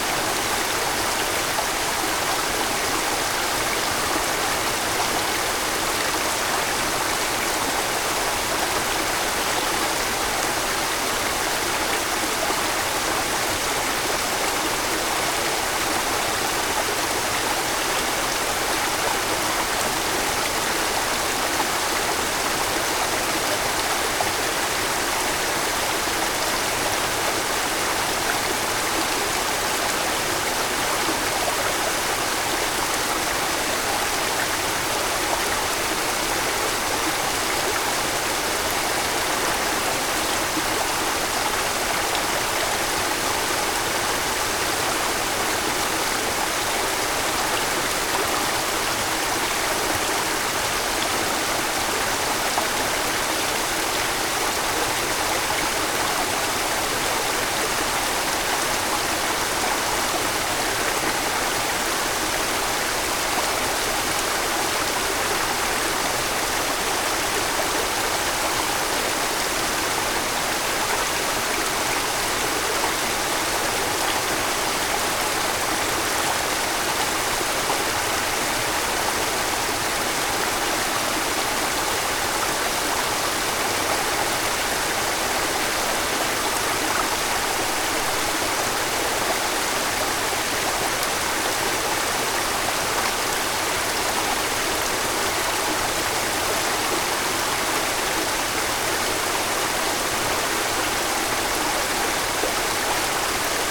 pohorje waterfall from above - pohorje waterfall from the bridge
babbling waters of a stream feeding into the waterfall, recorded from the middle of a small wooden foodbridge
21 November